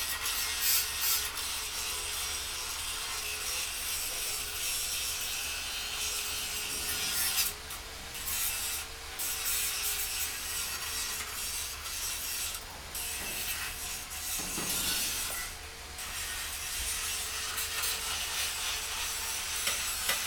Rue LIB, Dakar, Senegal - metal workshop
Sénégal, 24 February 2020